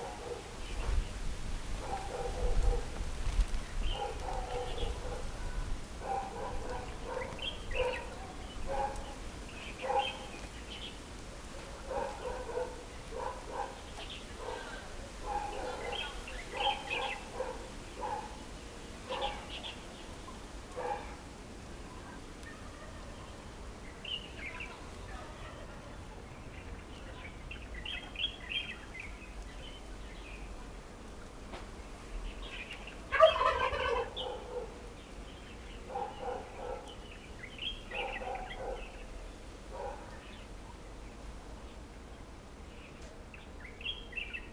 Fangliou Rd., Fangyuan Township - All kinds of poultry
Birds singing, Chicken sounds, Turkey calls, Zoom H6
Fangyuan Township, Changhua County, Taiwan, 6 January, ~17:00